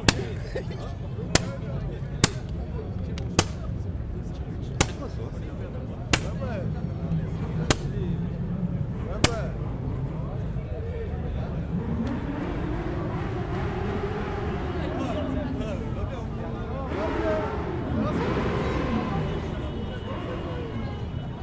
Pl. du Peuple, Saint-Étienne, France - St-Etienne (42000)
St-Etienne (42000)
Manifestation des "Gilets Jaunes"
Auvergne-Rhône-Alpes, France métropolitaine, France, 8 December 2018, 14:00